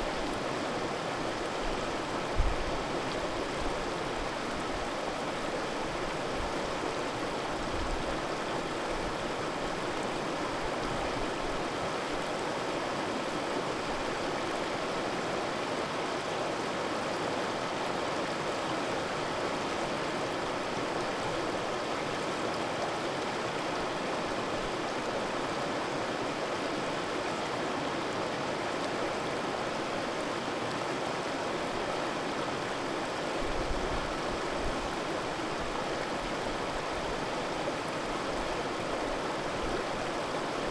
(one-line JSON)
{"title": "Seymour Valley Trailway", "date": "2011-08-04 12:23:00", "description": "Lower Seymour conservation reserve, north vancouver", "latitude": "49.37", "longitude": "-123.00", "altitude": "138", "timezone": "America/Vancouver"}